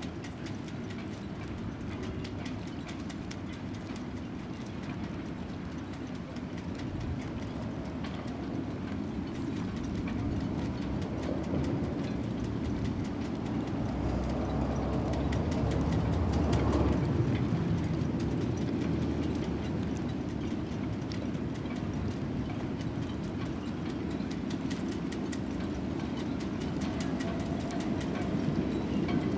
Lisbon, Belém, marina - masts in the wind - take two
another recording of the complex jiggling and clanging of the boats rigging in the marina.
Lisbon, Portugal, 27 September 2013, ~13:00